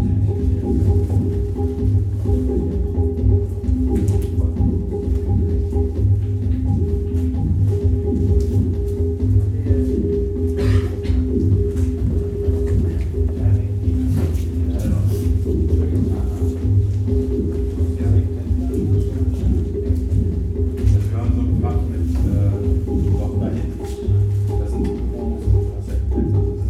berlin, paul-lincke-ufer: hardwax - the city, the country & me: hardwax record store
the city, the country & me: march 10, 2011
Berlin, Germany, 10 March, 18:04